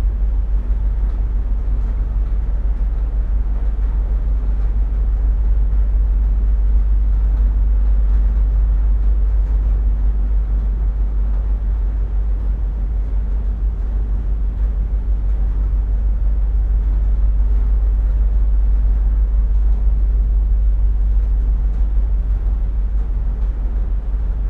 Pier Rd, Isle of Islay, UK - ferry ... in motion ...
Kennecraig to Port Ellen ferry to Islay ... in motion ... lavalier mics clipped to sandwich box ...